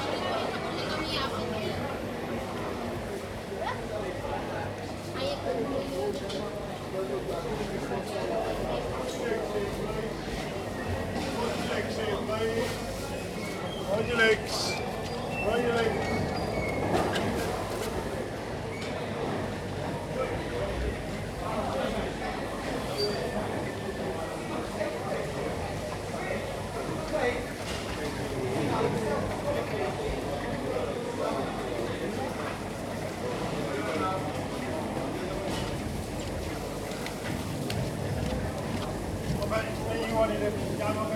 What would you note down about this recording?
Walking around the market with a Zoom stereo mic, includes sounds of traders, porters, customers and crabs on polystyrene boxes.